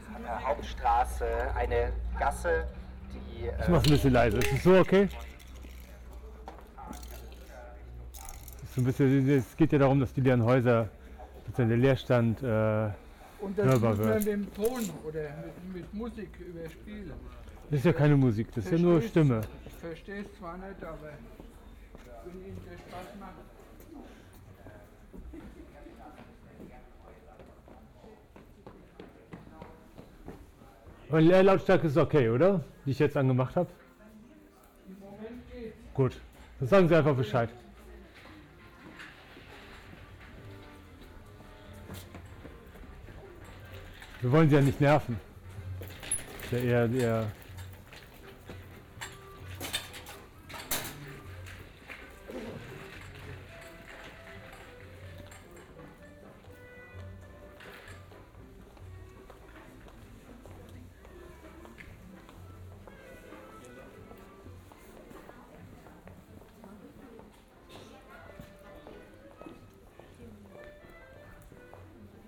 Der Leerstand spricht, Bad Orb - Complaints
While preparing his shop (putting out commodities) he states that the voice of the radio disturbs him. Binaural recording.